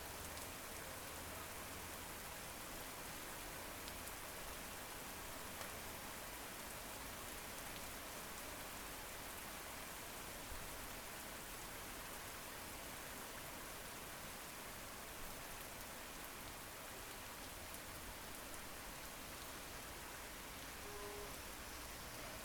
via Modigliani, Piombino, Italy - rain in Piombino
rain in Piombino, cars passing by